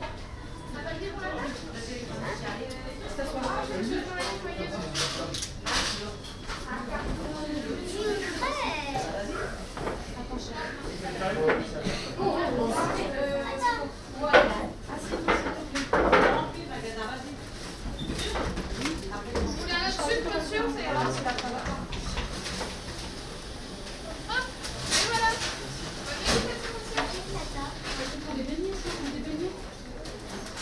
Lille-Centre, Lille, Frankrijk - Interieur of 'Paul'
After a long day of walking I ended up in a bakery for a 'chausson au pomme' and a coffee. ’Paul’ is an international chain of bakery restaurants established in 1889 in the city of Croix, near Lille, in Northern France.
Lille, France